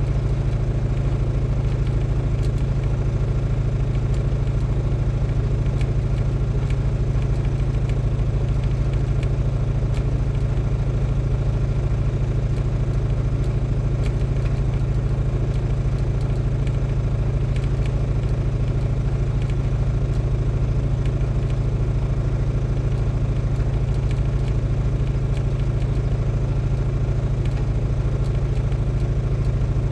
{"title": "berlin: liberdastraße - the city, the country & me: generator", "date": "2010-08-20 01:39:00", "description": "the city, the country & me: august 20, 2010", "latitude": "52.49", "longitude": "13.43", "altitude": "43", "timezone": "Europe/Berlin"}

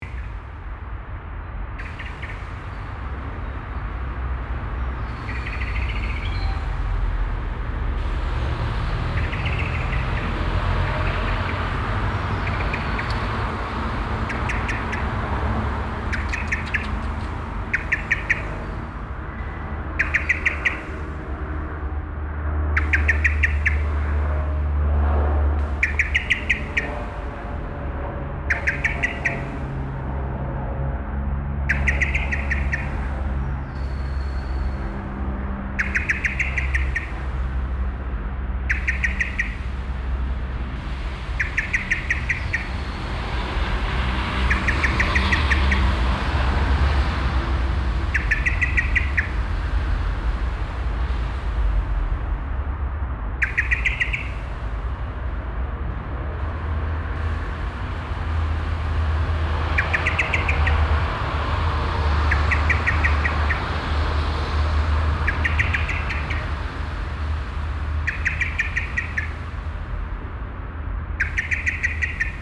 {"title": "Südostviertel, Essen, Deutschland - essen, blackbird, traffic and bells", "date": "2014-04-18 14:00:00", "description": "An der Auferstehungskirche. Eine Amsel kommt aus dem Gebüsch und schirpt. Verkehr passiert die Strasse. Die Stundenglocke der Kirche.\nAt The Auferstehungs Church. A blackbirb coming out of a bush chirps constantly. The passing street traffic. The hour bell of the church.\nProjekt - Stadtklang//: Hörorte - topographic field recordings and social ambiences", "latitude": "51.45", "longitude": "7.03", "altitude": "107", "timezone": "Europe/Berlin"}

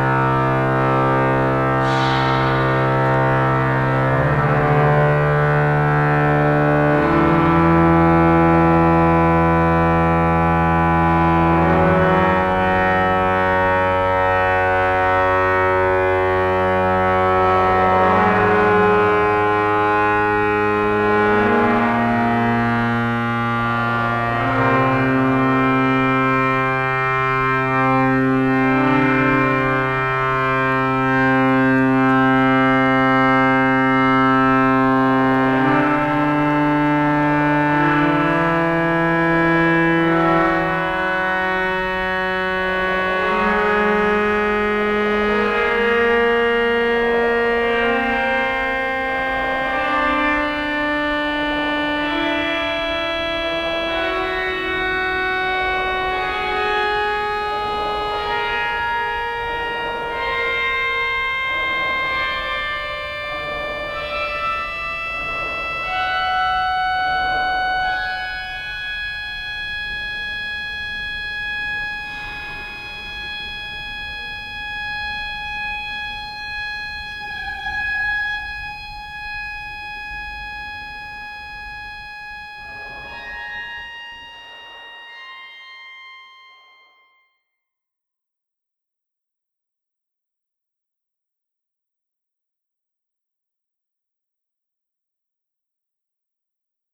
{"title": "essen, hohe domkirche, inside church - essen, hohe domkirche, organ tune", "date": "2014-04-12 11:00:00", "description": "Eine weitere Aufnahme in der Domkirche. Der Klang der Orgel während sie gestimmt wird.\nAnother recording inside the dom church. The sound of the organ as it is tuned.\nProjekt - Stadtklang//: Hörorte - topographic field recordings and social ambiences", "latitude": "51.46", "longitude": "7.01", "timezone": "Europe/Berlin"}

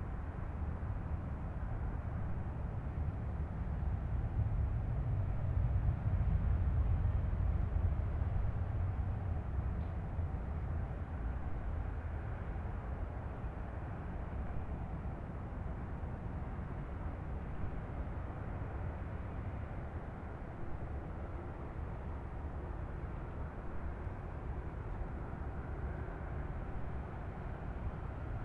{"title": "neoscenes: on the Cook at night", "latitude": "-33.92", "longitude": "151.16", "altitude": "8", "timezone": "Europe/Berlin"}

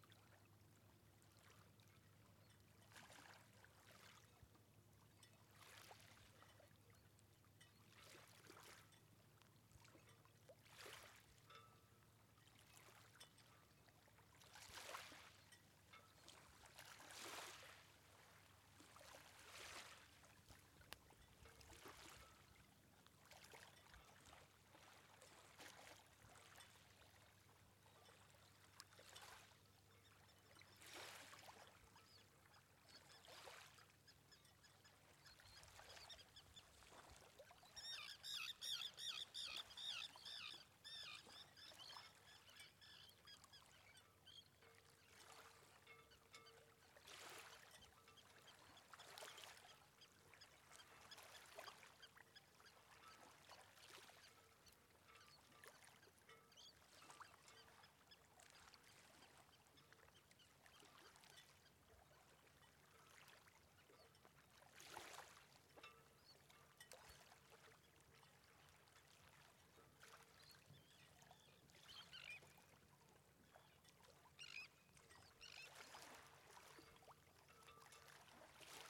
{"title": "Unnamed Road, Larmor-Baden, France - amb calme avec mats de bateau et vaguelettes", "date": "2021-08-03 09:59:00", "description": "ambiance calme prise depuis les rochers de l'île berder - quelques mouettes, un bateau à moteur au loin et des claquements métalliques de mats de bateaux qui se mêlent aux vaguelettes.", "latitude": "47.58", "longitude": "-2.89", "timezone": "Europe/Paris"}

